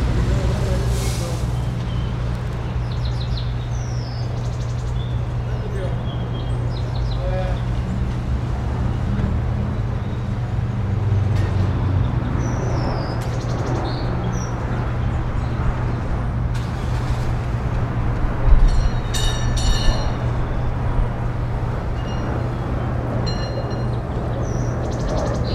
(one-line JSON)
{"title": "Narvarte Oriente, Ciudad de México, D.F., México - Just a busy corner in Mexico City", "date": "2016-02-11 12:30:00", "description": "Downstairs is a repair shop, cars passing by, birds, airplanes... Nice spot! Recorded with a Perception 220, to a Fast-Track Pro on Logic.", "latitude": "19.39", "longitude": "-99.15", "altitude": "2242", "timezone": "America/Mexico_City"}